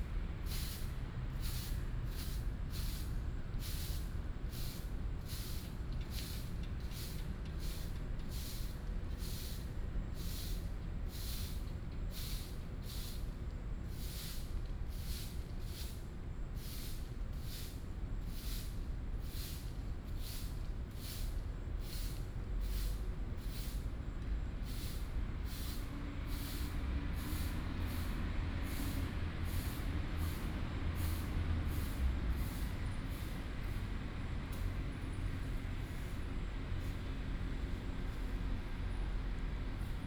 空軍八村, Hsinchu City - Clean the leaves
Clean the leaves, gecko sound, Formerly from the Chinese army moved to Taiwans residence, Binaural recordings, Sony PCM D100+ Soundman OKM II
Hsinchu City, North District, 北大路136巷19號, 15 September 2017